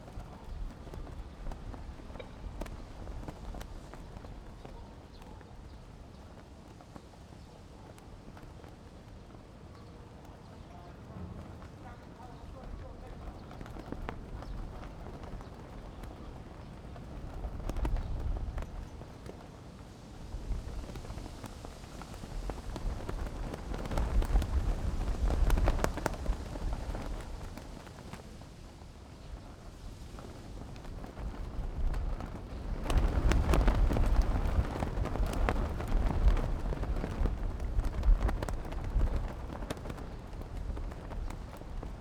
前寮漁港, Magong City - Wind and Banner
Wind and Banner, In front of the temple, Next to the pier
Zoom H6+Rode NT4